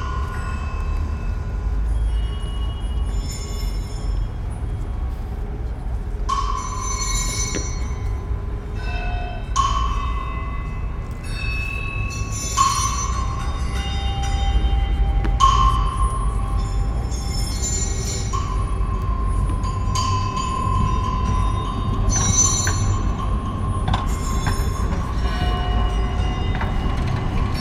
Binaural recording of site-specific performance in the tunnels below this square, made at the end of the 'Urban Sound Ecology' workshop organized by the Muzeum Sztuki of Lodz Poland. Speakers were placed in the square for the public to listen.
Łódź, Poland, 10 April, ~19:00